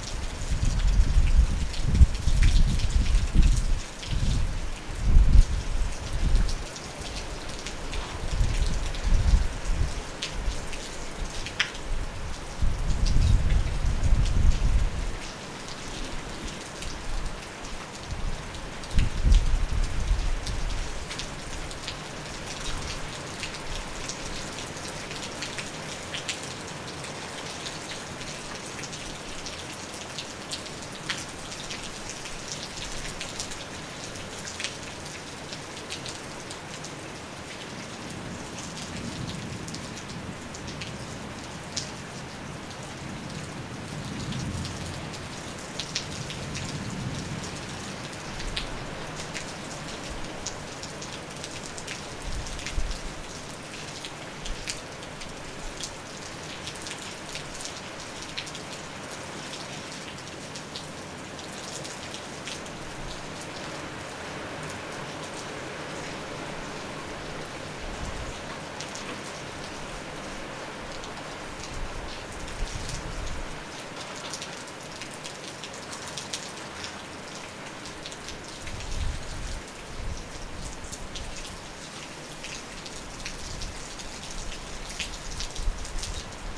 Rúa Cansadoura, Nigrán, Pontevedra, Spain - Elsa storm
heavy rain and thunderstorm by Elsa
22 December 2019, 01:38